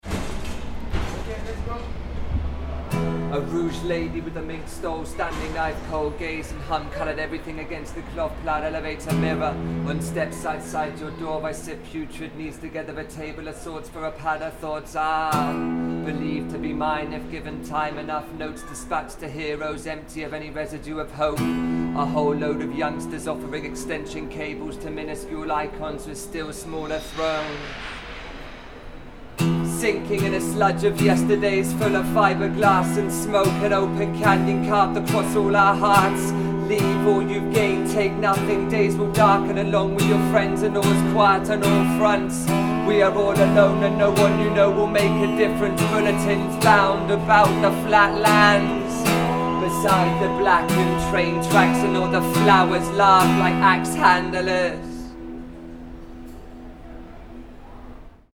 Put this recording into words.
A Band of Buriers / Happening N°1 / part 1